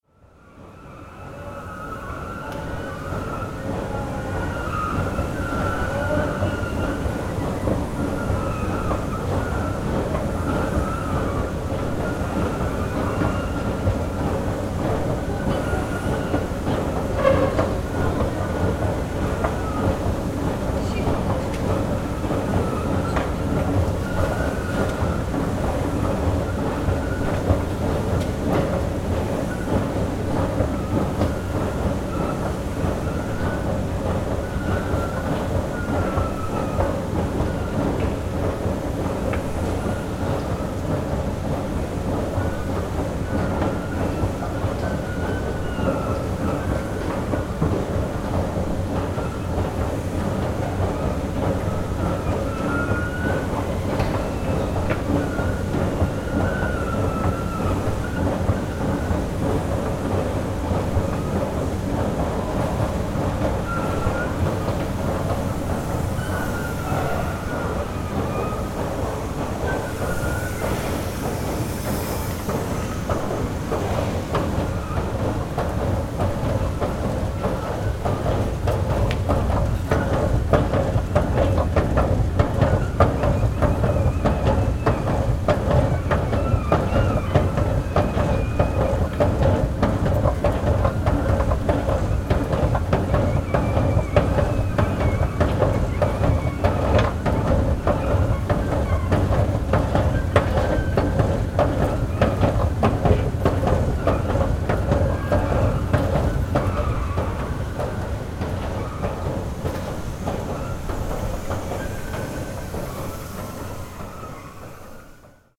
Howling escalators, Hradčanská
Howling escalators in Hradčanská metro station.
25 June 2011